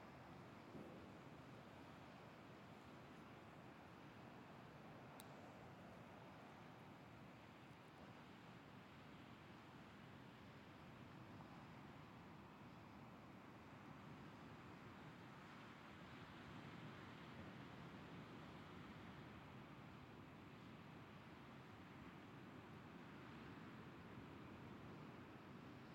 Saint-Vincent-sur-Jard, France - Ocean in the distance
Ocean, Vendée, 20 metres, low tide, continuous waves, evening
by F Fayard - PostProdChahut
Sound Device MixPre - Fostex FR2, MS Neuman KM 140-KM120
Pays de la Loire, France métropolitaine, France, 10 August 2013